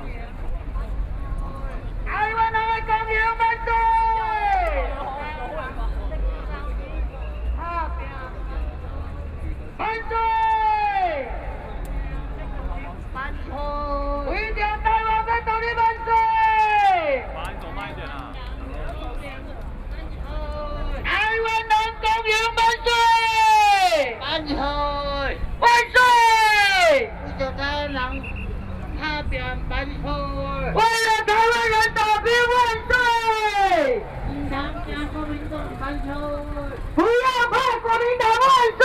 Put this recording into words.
Packed with people on the roads to protest government